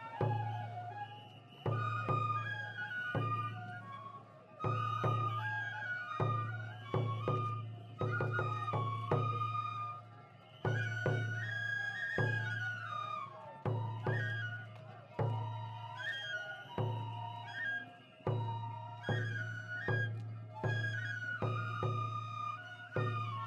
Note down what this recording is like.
Walking from the covered shopping arcade into the festival and back again.